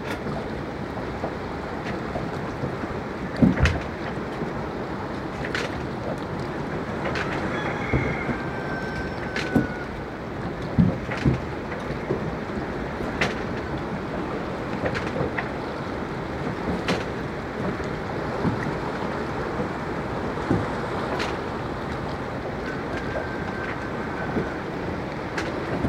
Early morning recording from a boat at the bay - rooster, waves splashes, some jumps, and swims...
AB stereo recording (17cm) made with Sennheiser MKH 8020 on Sound Devices MixPre-6 II.